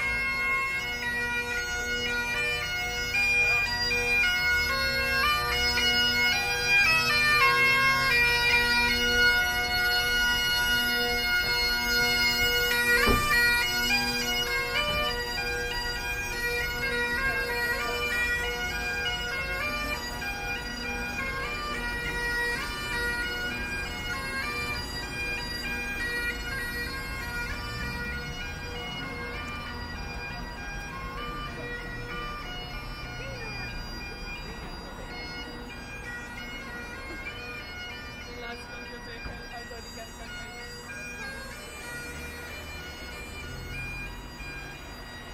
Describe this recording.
end of 'soundwalk' with binaurals from end of West Bay path, amusement hall at caravan park, water-gate into harbour from the bridge.